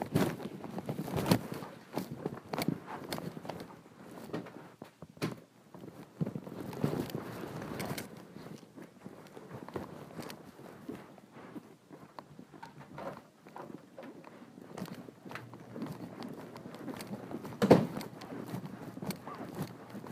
Ames, IA, USA - Backpack going to class
23 September, 11:57